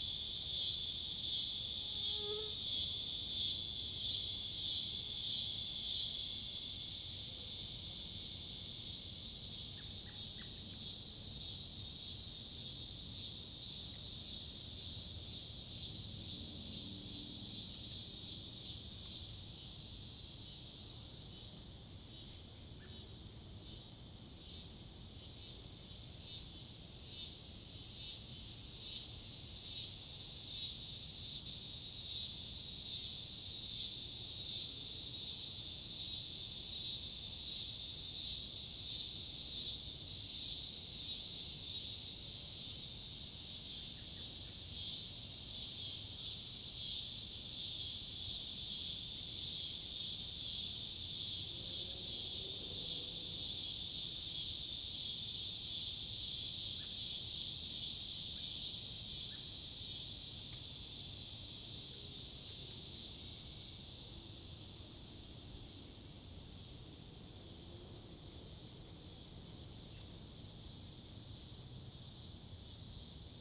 Queeny Park, Town and Country, Missouri, USA - Dogwood Trail Pond
Recording in woods near pond in the evening
Missouri, United States, 19 August 2022, 19:36